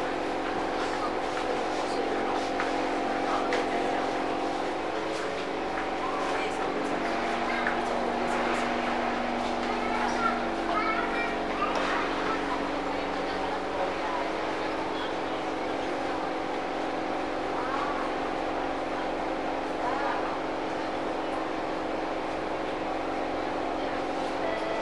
MRT Expo stn, Singapore
On the train to Changi Airpot, MRT
18 March 2011